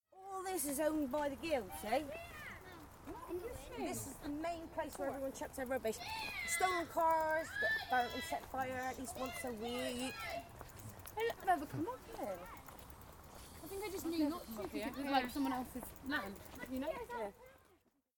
Efford Walk One: Abandonded land owned by the Showmans Guild - Abandonded land owned by the Showmans Guild